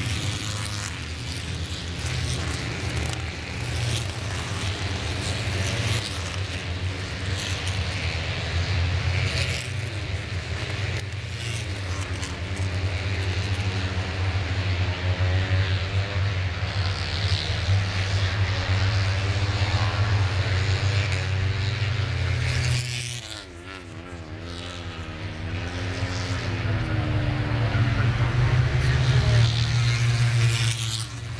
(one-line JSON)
{"title": "Lillingstone Dayrell with Luffield Abbey, UK - british motorcycle grand prix 2013", "date": "2013-09-01 10:25:00", "description": "moto3 warmup 2013 ... lavalier mics ...", "latitude": "52.07", "longitude": "-1.02", "timezone": "Europe/London"}